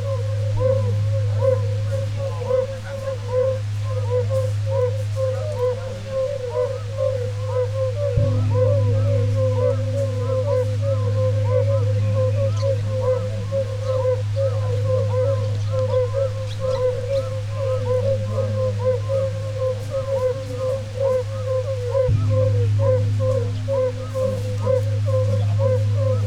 건봉사 Geonbongsa - Fire-bellied toads and Geonbongsa large bell
by chance...it may be that these vocalists are Asian fire-bellied toads
Gangwon-do, South Korea, 26 May 2018, 18:00